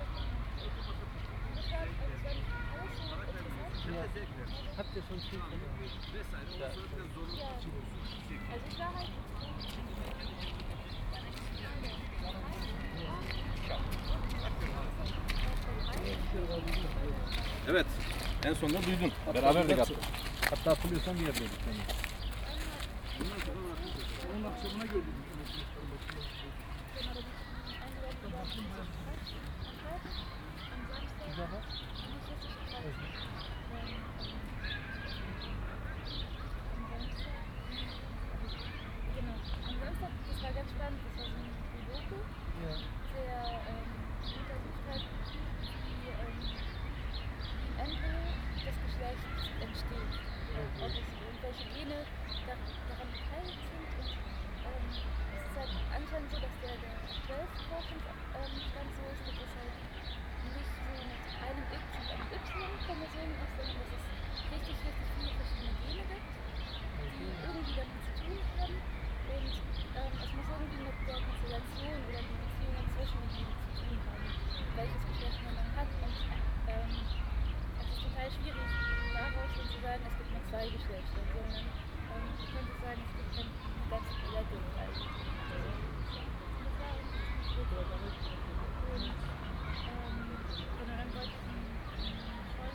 Lohmühlenstr. - Ufer / river bank
lohmühlenstr., riverside, landwehrkanal and teltowkanal meet here. former berlin wall area. warm summer sunday evening, steps, people talking. (binaural recording, use headphones)
Berlin, Germany